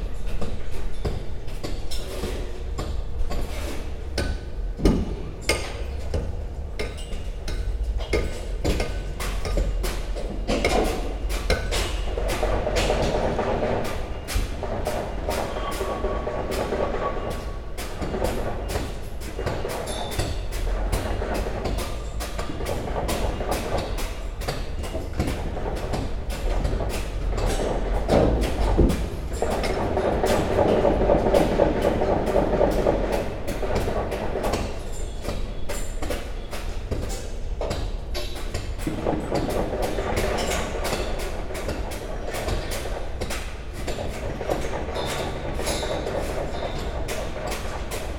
from/behind window, Mladinska, Maribor, Slovenia - from/behind window
constructions on nearby roof, hammering of an old facade
5 September 2012, ~09:00